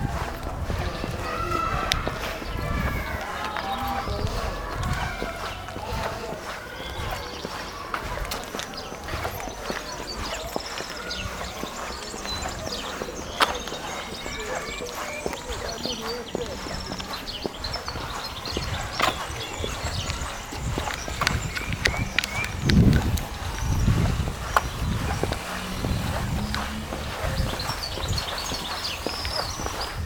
Walking through the public park
Metal railing and park life.
Registred with SONY IC RECORDER ICD-PX440
Italy, 26 May 2018, 15:05